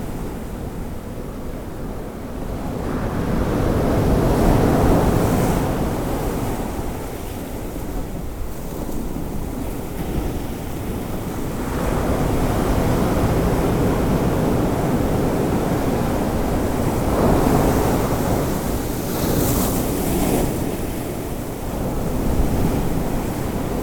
Sunset at the Swakop...
recordings are archived here: